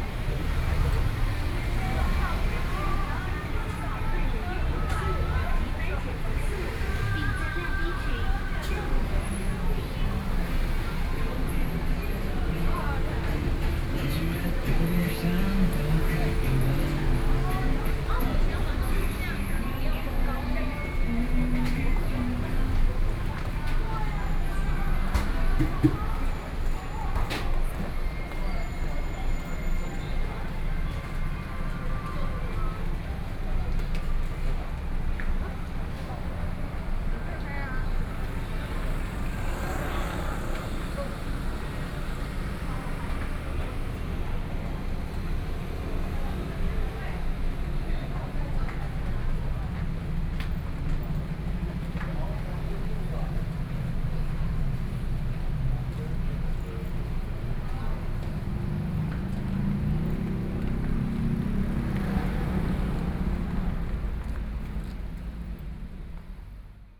興東路, 羅東鎮賢文里 - walking on the Road
walking on the Road, Various shops voices, Traffic Sound
Yilan County, Taiwan